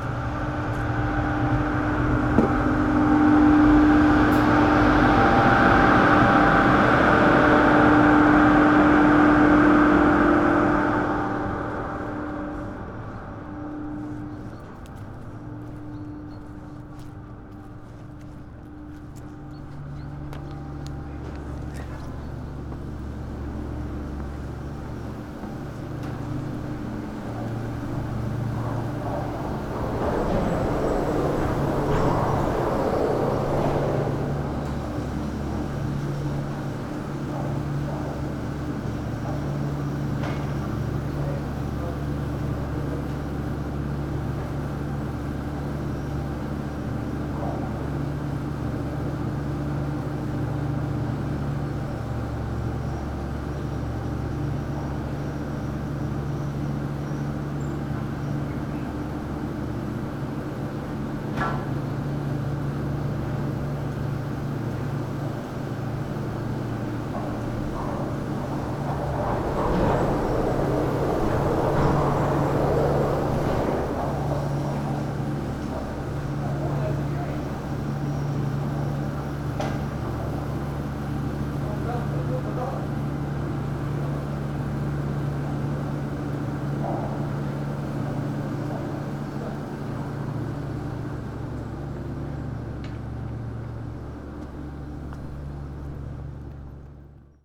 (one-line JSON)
{"title": "Maribor, Pohorje, Bellevue - gondola station", "date": "2011-11-21 14:55:00", "description": "gondola station at work. the cable car at 1000m goes down to maribor", "latitude": "46.52", "longitude": "15.58", "altitude": "1046", "timezone": "Europe/Ljubljana"}